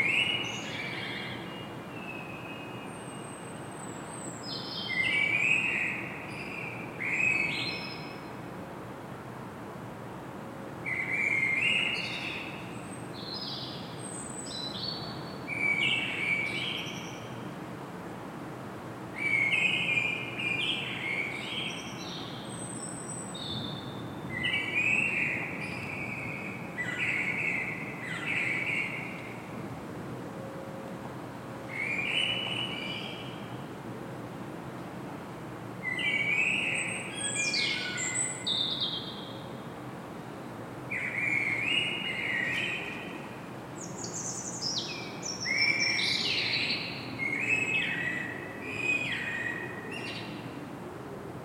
Saint-Gilles, Belgique - two blackbirds in the morning
Tech Note : Sony PCM-D100 internal microphones, wide position.